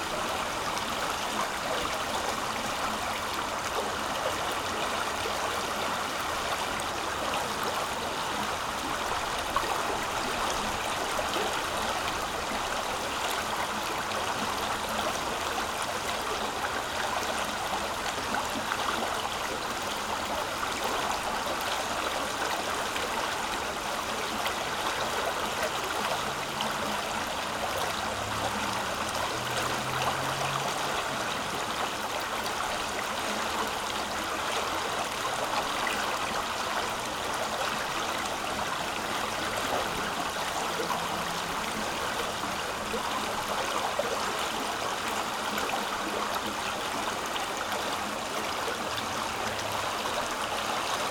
Mont-Saint-Guibert, Belgique - The river Orne
Recording of the river Orne, in a pastoral scenery.
Recorded with Audioatalia binaural microphones.
Mont-Saint-Guibert, Belgium